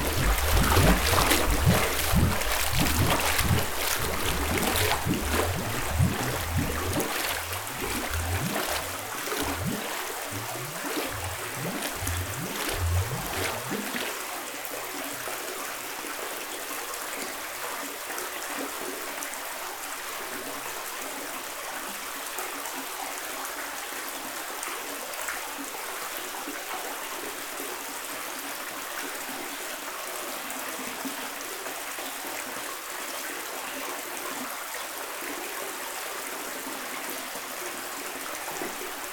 Gembloux, Belgique - Strange bubbles
In an underground mine, a very strange bubbles system, in a water tank. This is natural and this comes back naturally every 50 seconds. But why ??? In fact, it was funny.